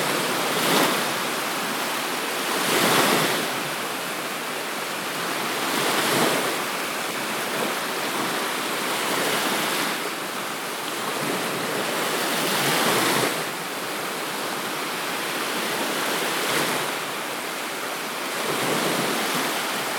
Neringos sav., Lithuania - The Beach at Night
Recordist: Saso Puckovski
Description: On the beach on a calm morning. Waves crashing. Recorded with ZOOM H2N Handy Recorder.
27 July, 03:52